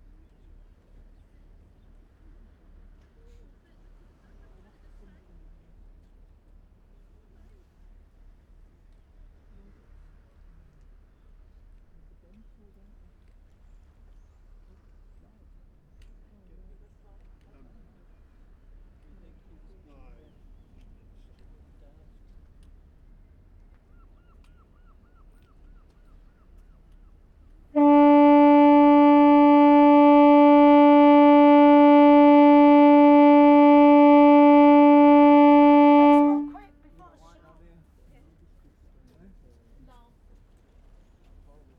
Seahouses breakwater, UK - Foghorn ... Seahouses ...
Foghorn ... Seahouses harbour ... air powered device ... open lavaliers clipped to baseball cap ... background noise ... voices ...